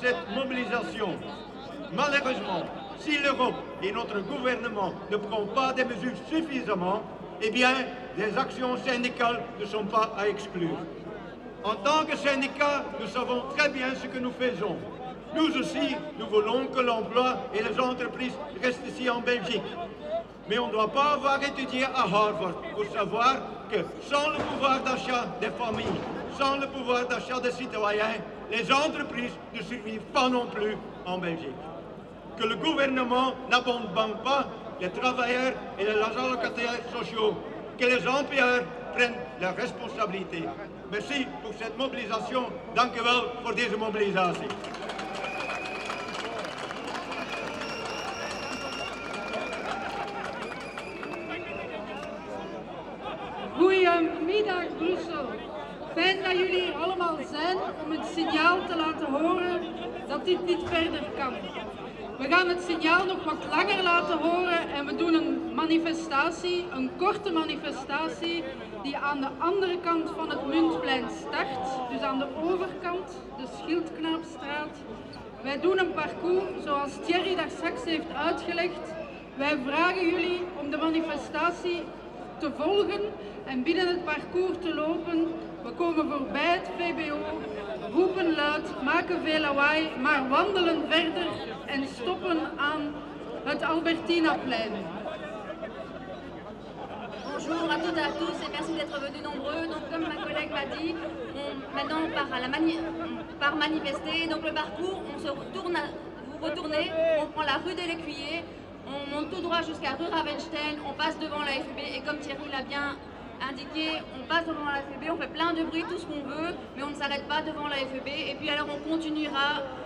Place de la Monnaie, Bruxelles, Belgique - Speeches at the demonstration.
Discours lors de la manifestation syndicale pour le pouvoir d’achat.
Speeches at the trade union demonstration for purchasing power.
Tech Note : Sony PCM-M10 internal microphones.
Brussel-Hoofdstad - Bruxelles-Capitale, Région de Bruxelles-Capitale - Brussels Hoofdstedelijk Gewest, België / Belgique / Belgien, 21 September 2022